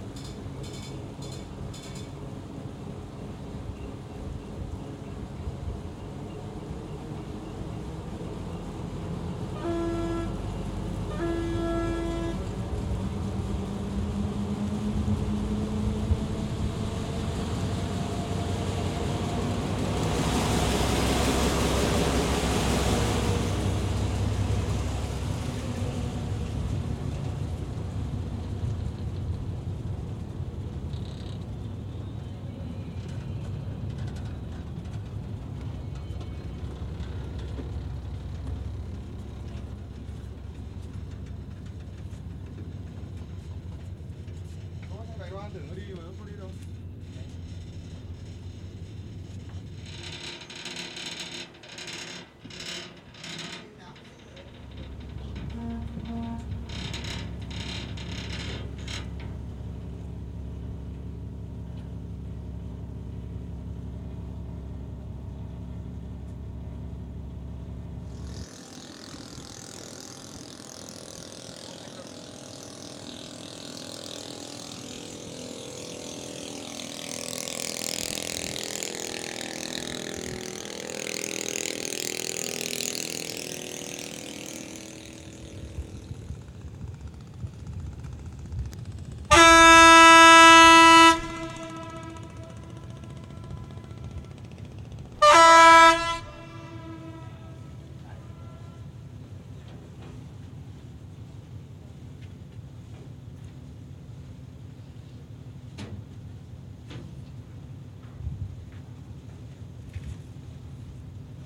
Máy Chai, Ngô Quyền, Hải Phòng, Vietnam - Départ Ferry Hai Phong Mai 1999
Dans la foule
Mic Sony stéréo + Minidisc Walkman